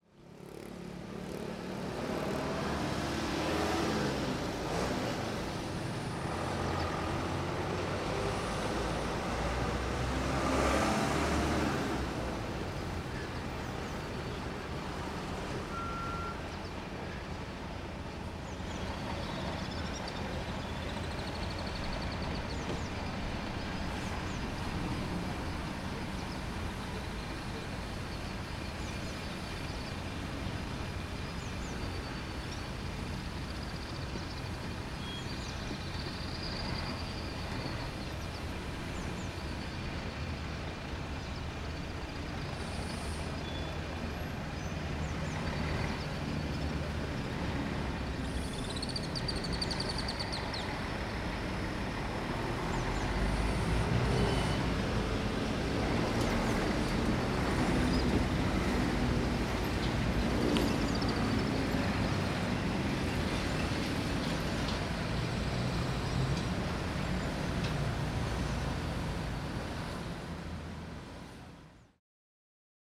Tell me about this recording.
Cars passing by, a key feature of the main street. The square is surrounded by a parking and Konstantinou Zavitsianou street.